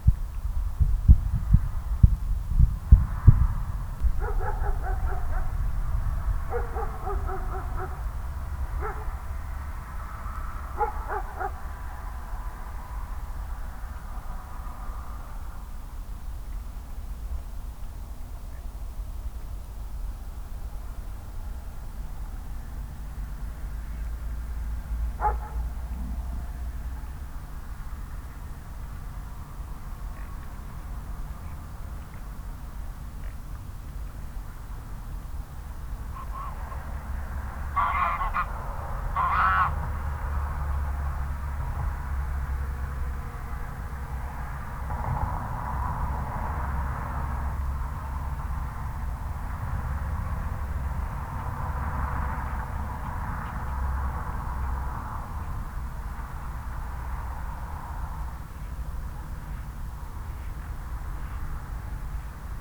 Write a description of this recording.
sheep, wild geese, ducks, barking dogs and other busy animals, the city, the country & me: march 5, 2013